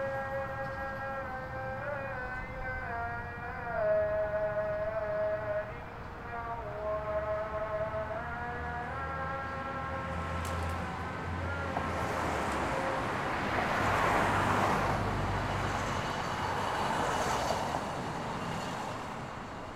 Hamtramck, MI, USA - Islamic Call to Prayer (Evening on Holbrook St.)
Islamic Call to Prayer recorded in early evening on sidewalk on Holbrook Street. Used a Tascam DR o7 handheld with wind screen and low cut filter on. Right beside a very busy street, loud passing of cars with some light urban ambiance finish the atmosphere. Only edits in audacity were fade in, out, and slight gain increase.